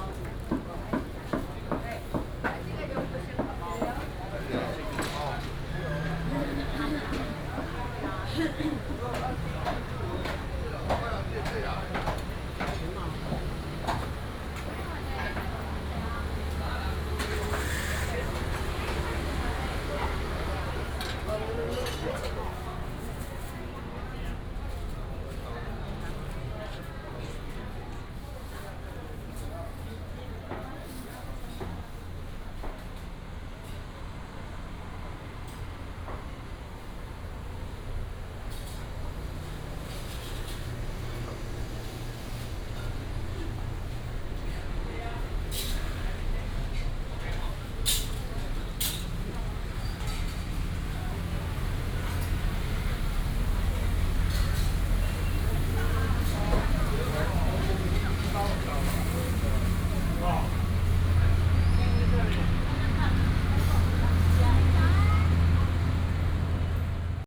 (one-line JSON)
{"title": "彰化民生市場, Changhua City - Walking in the traditional market", "date": "2017-03-18 09:05:00", "description": "Walking in the traditional market", "latitude": "24.08", "longitude": "120.55", "altitude": "24", "timezone": "Asia/Taipei"}